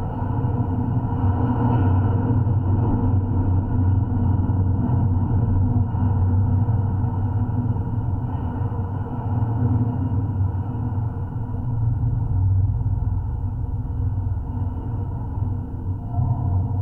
Geophone recording from fence along Kal-Haven Trail